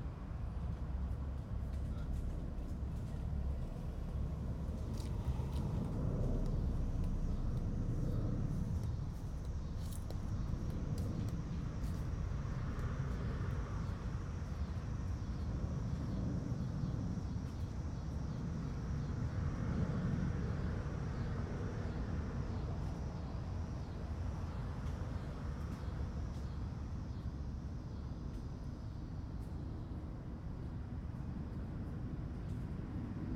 Portage Park, Chicago, IL, USA - Summer evening soundscape in Jefferson Park, Chicago
Cicadas, traffic, planes and kids are audible in this summer evening soundscape from the Jefferson Park neighborhood, Chicago, Illinois, USA.
2 x Audio Technica AT3031, Sound Devices 302, Tascam DR-40.